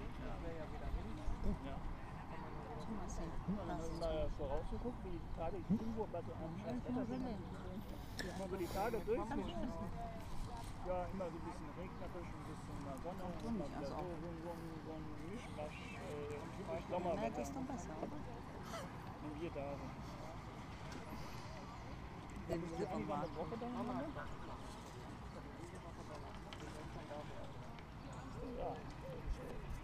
Dahlwitz-Hoppegarten, Hoppegarten, Deutschland - people cake weather park
On the final day of the land art / public objects exhibition of the "Endmoräne" artist group at the Lenné - Park in Hoppegarten, their combined voices make a phantastic surreal radiopiecelet, together with the natural sound environment of the park around us.